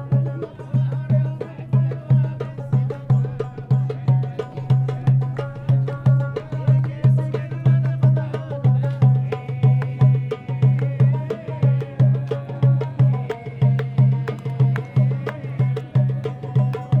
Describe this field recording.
During the day, snake charmers, people who shows their monkey, women who put henna on your hands, are in the place